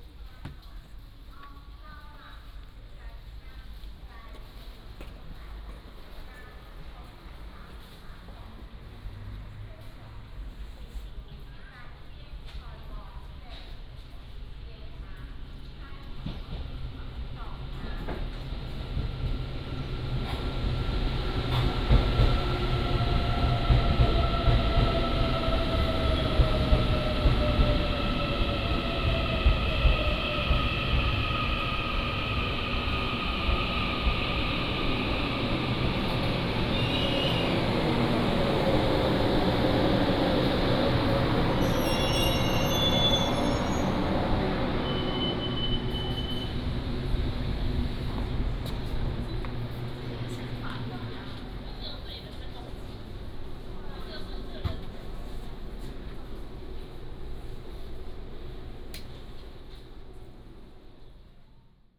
Tongluo Station, 苗栗縣銅鑼鄉 - In the station platform
In the station platform, Train arrived